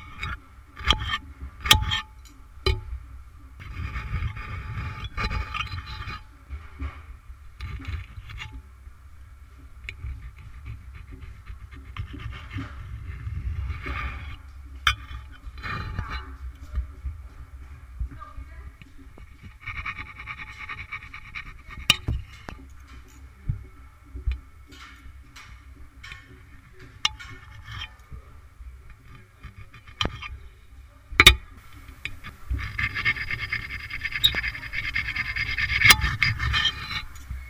Around Alexanderplatz. China Imbiss - Because i was hungry..
Because i was hungry..
Berlin.., 5 February 2010, 00:14